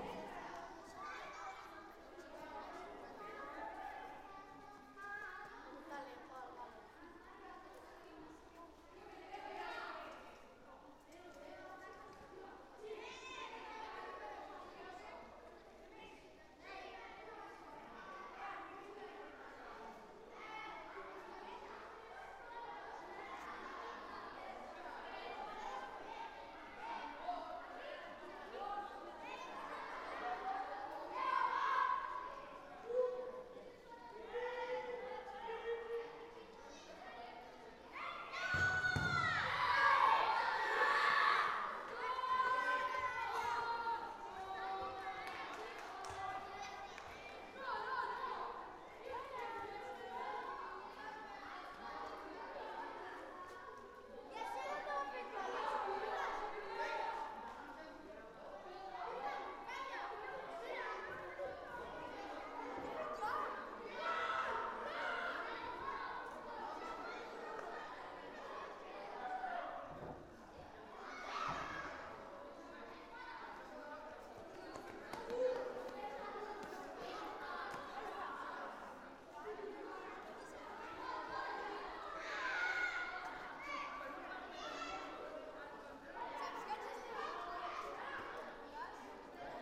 Plaça de Sant Felip Neri
Kids having freetime before lunch in a public square, famous for its historical influence. In this square, during the civil war, people was executed by firing squad.